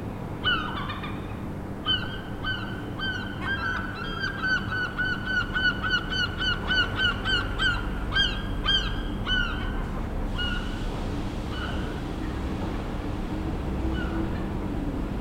Seagulls, an early morning natural alarm clock